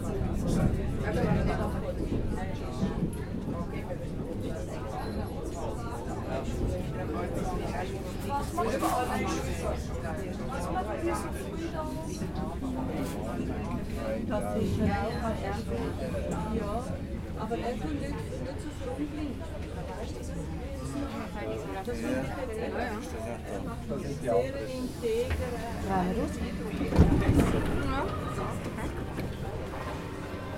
Zugankunft Grellingen - Zugankunft Grellingen
Zugankunft in Grellingen im Laufental, Birs
Grellingen, Schweiz, 12 June 2011, 11:15